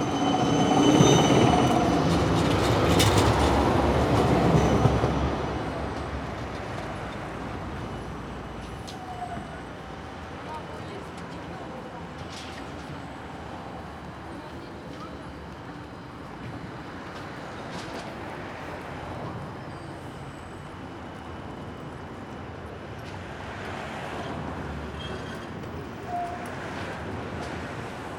Poznan, downtown, Roosevelt street - in front of construction site
recorded at a tram stop, next to a big construction site of a new tram station. various sounds of construction workers moving and dropping building materials, shouting commands at each other. lots of traffic, trams passing in front of the mics, people going in all directions.
Poznan, Poland, March 2014